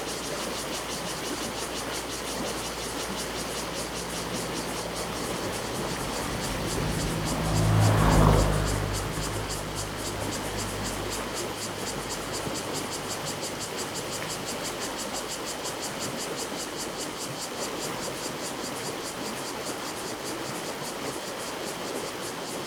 Cicadas sound, Traffic Sound, Very hot weather
Zoom H2n MS+ XY
Taitung County, Guanshan Township, 東8-1鄉道65號, 7 September 2014, ~12:00